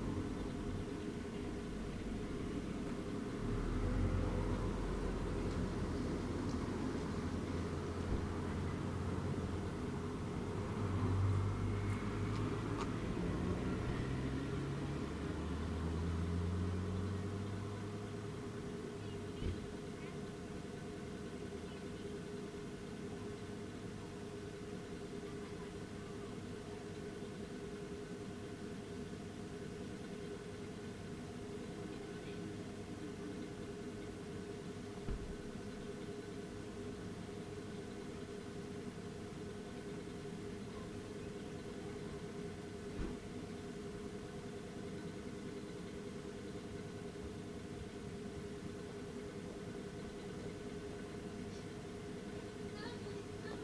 Lobster Pot car park, Dorset, UK - The noisy air vent at the Lobster Pot restaurant
In a fairly deserted spot, long after closing time, the Lobster Pot has a large ventilation shaft on its roof which emits this wheezy chord into the night. The last of the stragglers head home. Cars turn on the roundabout, kids and parents head back to their rented mobile homes or B&Bs.
23 July 2015, ~22:00